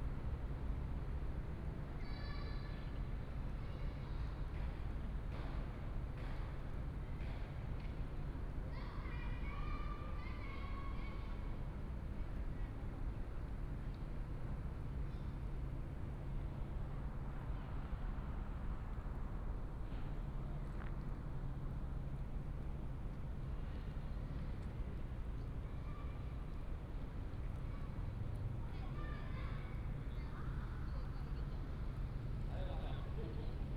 {"title": "中興文化創意園區, Wujie Township, Yilan County - In the square", "date": "2017-11-06 12:28:00", "description": "Transformation of the old paper mill, In the square, Tourists, Air conditioning noise, Traffic sound, Binaural recordings, Sony PCM D100+ Soundman OKM II", "latitude": "24.69", "longitude": "121.77", "altitude": "10", "timezone": "Asia/Taipei"}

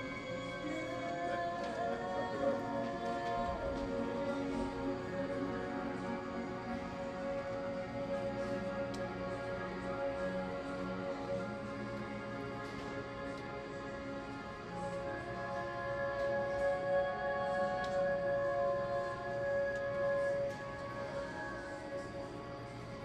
{"title": "Best Buy, Emeryville", "date": "2010-11-16 03:55:00", "description": "Best Buy Emeryville", "latitude": "37.83", "longitude": "-122.29", "altitude": "5", "timezone": "US/Pacific"}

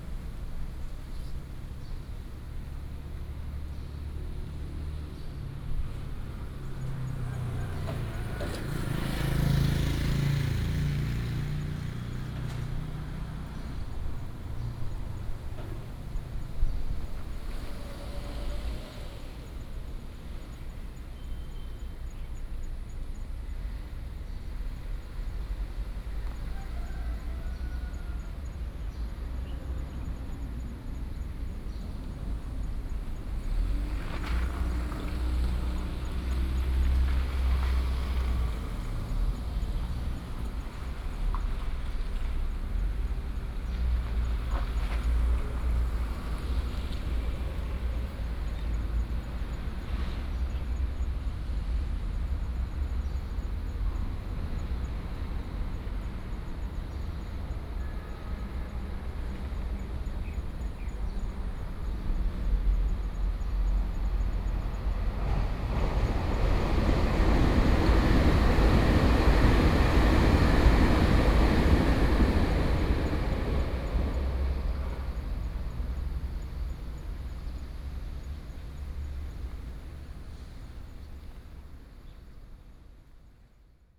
{"title": "頭城鎮外澳里, Yilan County - In the plaza next to the temple", "date": "2014-07-07 14:56:00", "description": "In the plaza next to the temple, Chicken sounds, Birdsong, Very hot weather, Traffic Sound, Traveling by train", "latitude": "24.88", "longitude": "121.84", "altitude": "15", "timezone": "Asia/Taipei"}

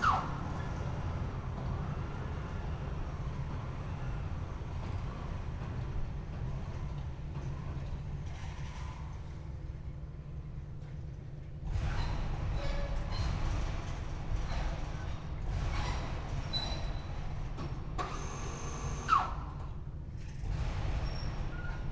{"title": "brandenburg/havel, kirchmöser, bahntechnikerring: track construction company - the city, the country & me: overhead crane", "date": "2014-08-04 16:42:00", "description": "overhead crane moving rails at the outside area of a track construction company\nthe city, the country & me: august 4, 2014", "latitude": "52.39", "longitude": "12.44", "altitude": "28", "timezone": "Europe/Berlin"}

